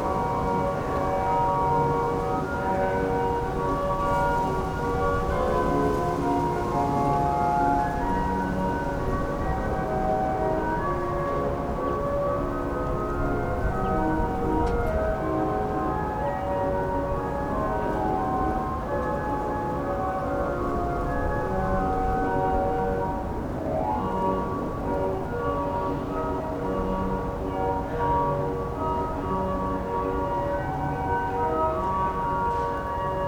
a street organ is playing somewhere around, then slowly disappearing, heard in the backyard
(Sony PCM D50)
Köln, Deutschland, November 2012